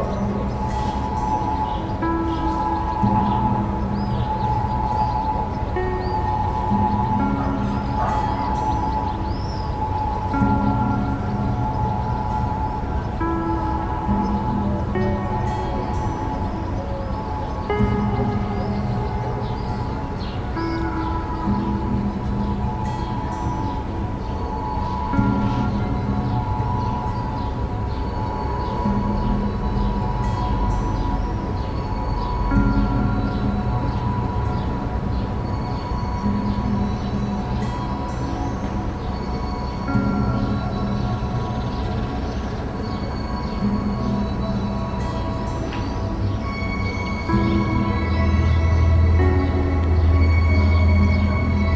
{"title": "Teslaradio, World Listening Day, Málaga, Andalucia, España - Locus Sonus #WLD2013", "date": "2013-07-18 22:30:00", "description": "Locus Sonus WLD2013 es un pieza de 42 minutos que se realizó en directo los pasados dias 17 y 18 de Julio de 2013 con motivo de la semana de la escucha, transmisiones enmarcadas dentro del World Listening Day.\nUtilicé los recursos sonoros del nodo Locus Sonus Malaga Invisible, el cual coordino y recursos de otros nodos de este proyecto asi como de Radio Aporee, mezclándolos en tiempo real con otras fuentes libres que se producian en esos instantes en la red.\n+ info en:", "latitude": "36.73", "longitude": "-4.37", "altitude": "52", "timezone": "Europe/Madrid"}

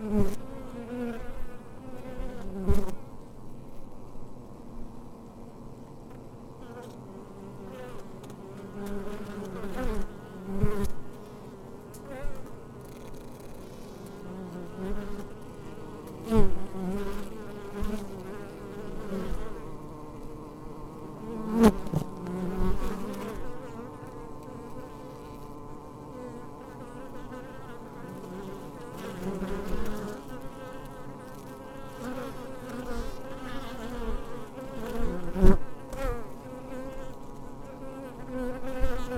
{"title": "Pound Lane Wood, UK - chorus of bees", "date": "2022-10-02 15:36:00", "latitude": "52.30", "longitude": "1.24", "altitude": "48", "timezone": "Europe/London"}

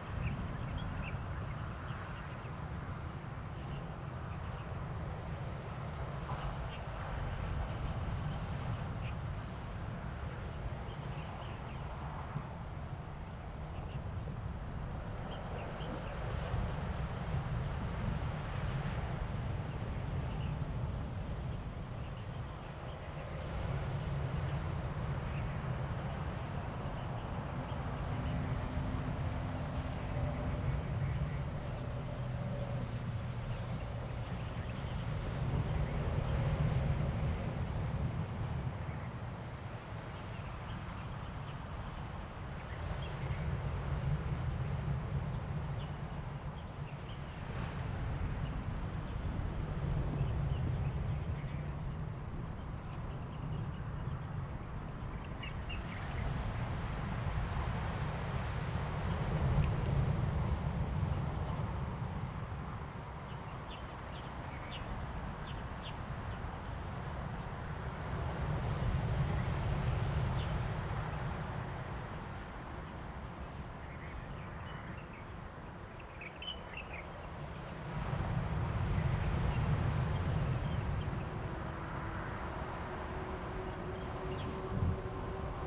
{"title": "南興溪橋, 大武鄉南迴公路 - waves and bird tweets", "date": "2018-04-24 08:11:00", "description": "The stream flows out of the sea, Waves, traffic sound\nZoom H2n MS+XY", "latitude": "22.31", "longitude": "120.89", "altitude": "4", "timezone": "Asia/Taipei"}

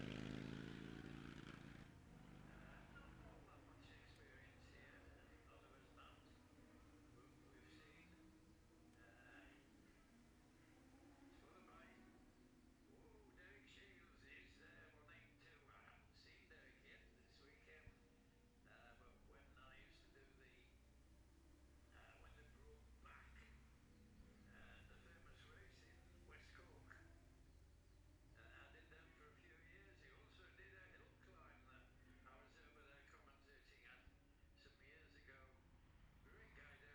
{"title": "Jacksons Ln, Scarborough, UK - gold cup 2022 ... classic s'bike practice ...", "date": "2022-09-16 10:39:00", "description": "the steve henshaw gold cup 2022 ... classic superbike practice ... dpa 4060s clipped to bag to zoom h5 ...", "latitude": "54.27", "longitude": "-0.41", "altitude": "144", "timezone": "Europe/London"}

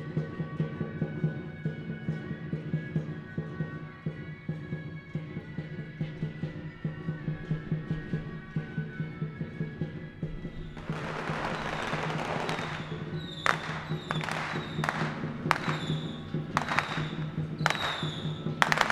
{"title": "芳苑鄉芳苑村, Changhua County - In front of the temple", "date": "2014-03-08 14:00:00", "description": "In front of the temple, Firecrackers, Traditional temple festivals\nZoom H6 MS", "latitude": "23.93", "longitude": "120.32", "timezone": "Asia/Taipei"}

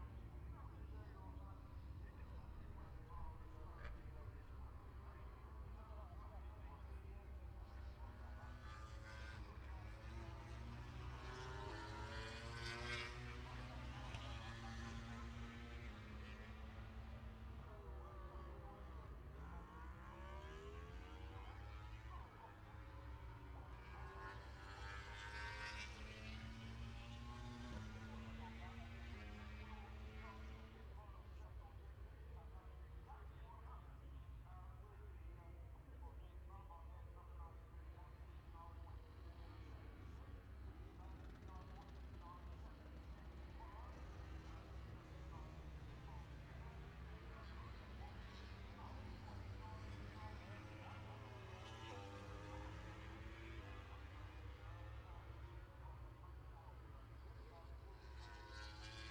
{"title": "Silverstone Circuit, Towcester, UK - british motorcycle grand prix 2019 ... moto grand prix ... fp2 contd ...", "date": "2019-08-23 14:50:00", "description": "british motorcycle grand prix 2019 ... moto grand prix ... free practice two contd ... maggotts ... lavalier mics clipped to bag ...", "latitude": "52.07", "longitude": "-1.01", "altitude": "158", "timezone": "Europe/London"}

